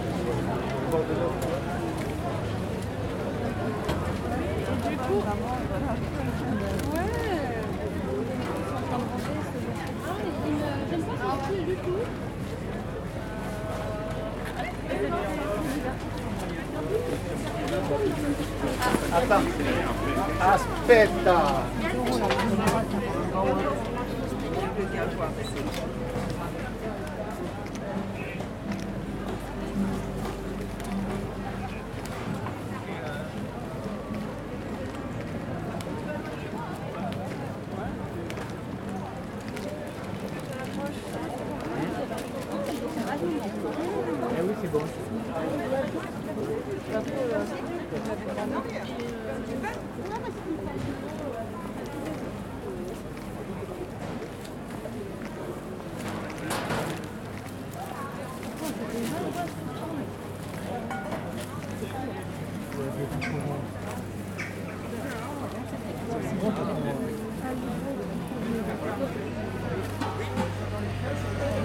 Outdoor maket of Saint Aubin
Dan Rob captation : 18 04 2021